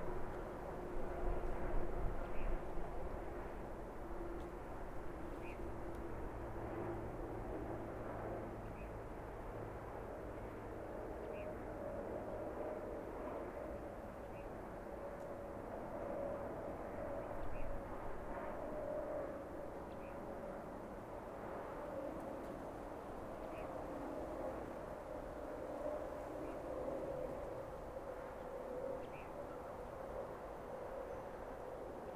Glorieta, NM, so called USA - GLORIETA summer evening 3